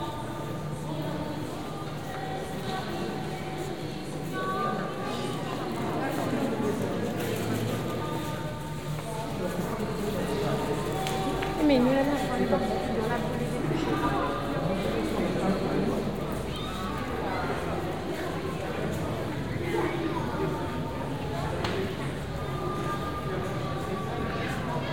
Church at Kotor, Czarnogóra - (220) BI Tourists inside church
Binaural recording of tourist visiting church.
Sony PCM-D100, Soundman OKM